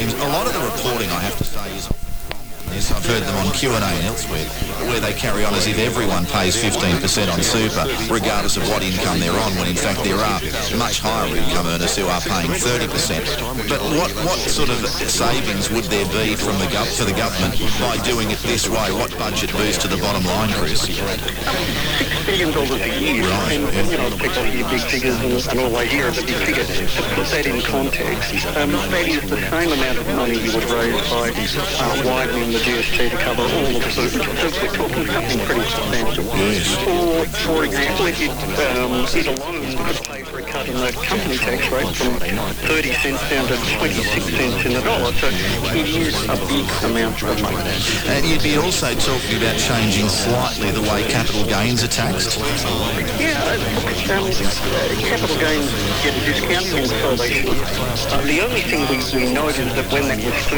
Sydney Olympic Park NSW, Australien - ArmoryRadio
Receiving a mix of radio stations in an old unused electricity cable and light switch by using an induction coil. Newington Armory outside the small building near building 20, a former storage for gun powder and other explosive stuff from the army.
Silverwater NSW, Australia, 26 October 2015, 11:00